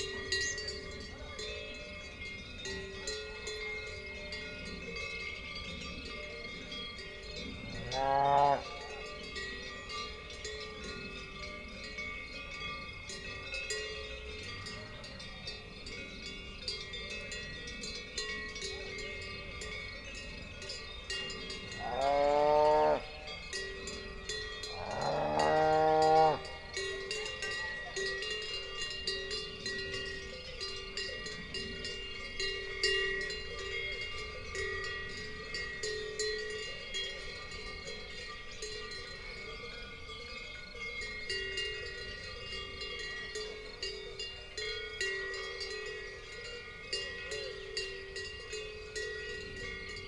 Tolmin, Slovenia - Cows with bells
Cows on pasture. Lom Uši pro, mixPreII
Slovenija, 25 June 2022, ~08:00